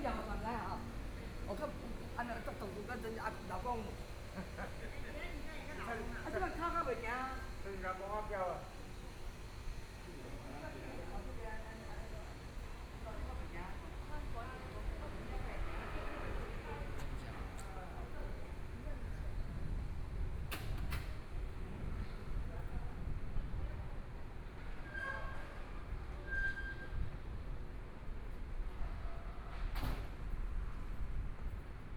Tianxiang Rd., Zhongshan Dist. - Walking at night in a small way
Walking at night in a small way, Traffic Sound
Please turn up the volume a little. Binaural recordings, Sony PCM D100+ Soundman OKM II
Zhongshan District, Taipei City, Taiwan, April 2014